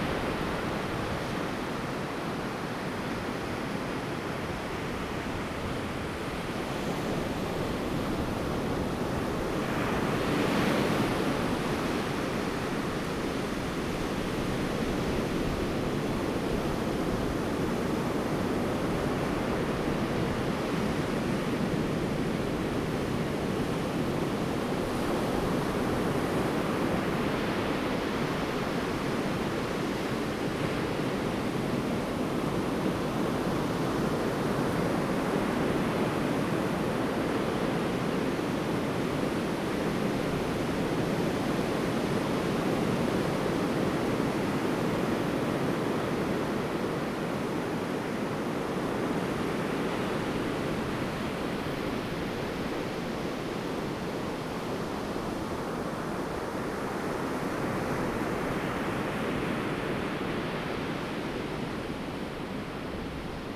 on the beach, wind, Sound of the waves, birds sound
Zoom H2n MS+XY
Manzhou Township, Pingtung County, Taiwan